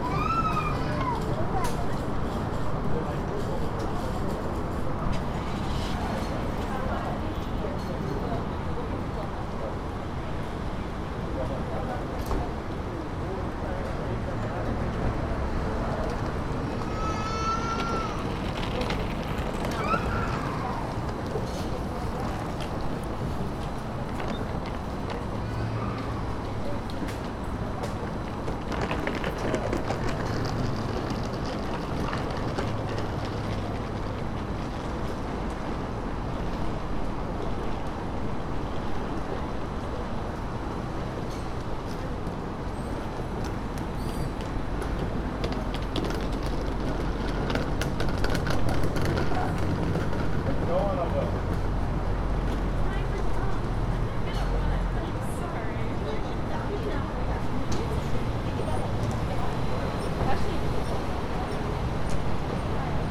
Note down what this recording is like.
Waiting for a ride back to a family member's house after a missed flight. Every part of the Newark airport was packed due to labor day weekend, including the pickup zone. People are heard walking by with their luggage as cars move from left to right in front of the recorder. [Tascam Dr-100mkiii onboard uni mics]